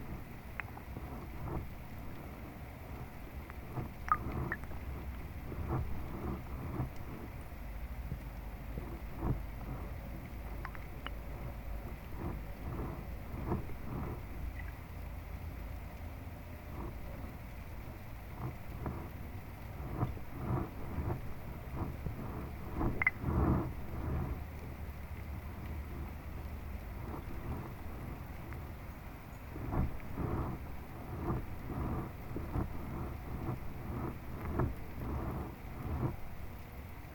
Vilnius, Lithuania, an attempt to listen underwater
not the best season for hydrophone, but...
Vilniaus miesto savivaldybė, Vilniaus apskritis, Lietuva